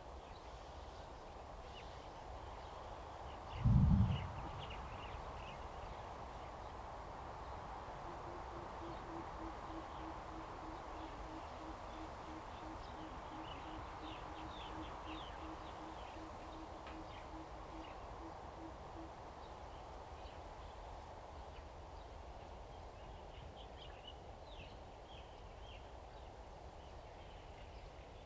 Lieyu Township, Kinmen County - Birds singing
Birds singing, Forest, Wind, Beside the lake
Zoom H2n MS +XY